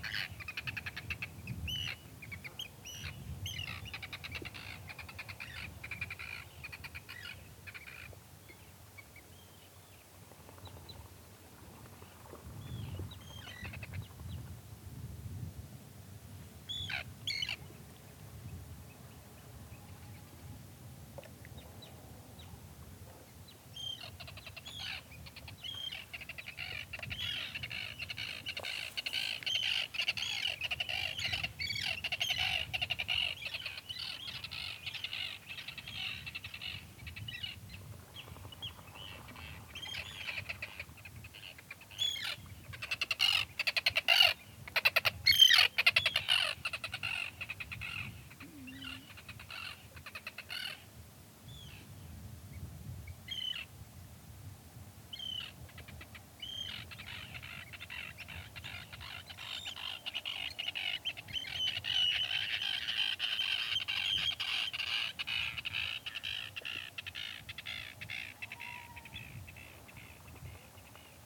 Sat on the rocks outside Nesbister Böd, Whiteness, Shetland Islands, UK - Listening to terns, wind, sheep and otters outside the camping böd
The böd at Nesbister is in a truly beautiful situation, a fifteen minute walk from where you can dump a car, perched at the edge of the water, at the end of a small, rocky peninsula. There is a chemical toilet and a cold tap there, and it's an old fishing hut. People who have stayed there in the past have adorned the ledge of the small window with great beach finds; bones, shells, pretty stones, pieces of glass worn smooth by the sea, and driftwood. There is a small stove which you can burn peat in, and I set the fire up, ate a simple dinner of cheese and rice cakes, then ventured out onto the rocks to listen to everything around me. Terns are the loudest thing in the evening by Nesbister, but the sheep who graze all around the peninsula were doing their evening time greetings, and the otters who live on a tiny island quite near to the böd were shyly going for their swims.
3 August, 21:35